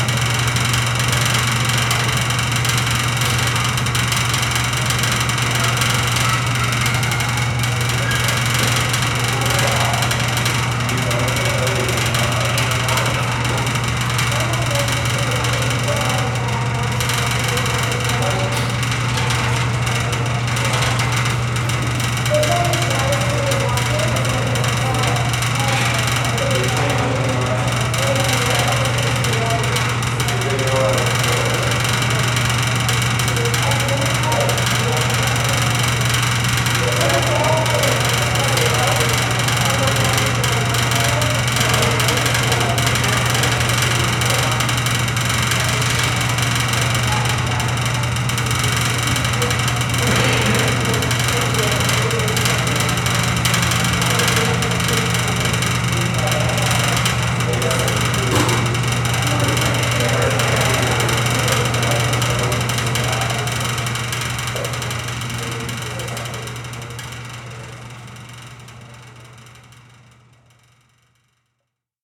Bench, Seattle, WA, USA - Out of Control Bench Rattle
Crazy bench freak out, clacking and rattling in a room full of passengers aboard the Bremerton Ferry, Seattle, WA. Everyone was transfixed on the bench, almost no talking.
Sony PCM-D50
13 August 2013